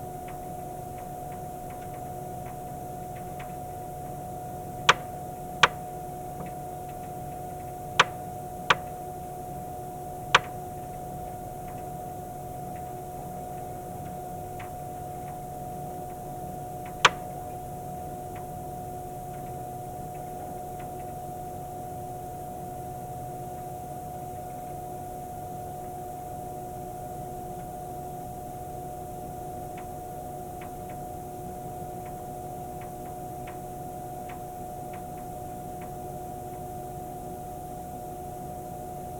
Recorded with a contact microphone this is the sound inside the metal tower of the wind generator. The wind is strong and the propeller at the top turns quite fast.
Germany, 2 November 2012